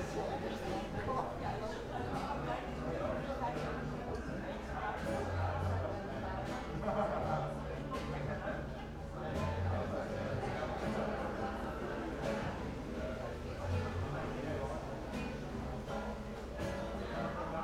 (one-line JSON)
{"title": "Berlin Bürknerstr., backyard window - party, wind in tree", "date": "2010-06-12 00:18:00", "description": "party in the neighbourhood, wind in the tree in front of my window", "latitude": "52.49", "longitude": "13.42", "altitude": "45", "timezone": "Europe/Berlin"}